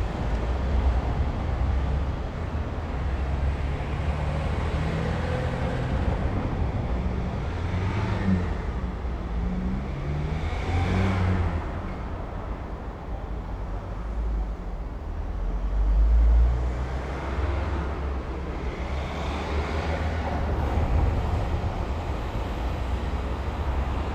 Berlin: Vermessungspunkt Friedelstraße / Maybachufer - Klangvermessung Kreuzkölln ::: 08.10.2010 ::: 10:39
Berlin, Germany, 8 October 2010, 10:39